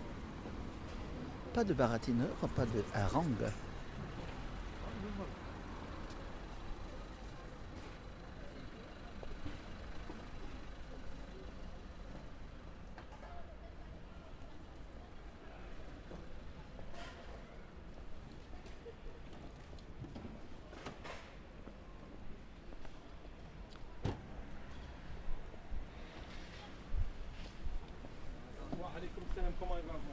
Salengro - Marietton, Lyon, France - Place de paris
Market in Place de Paris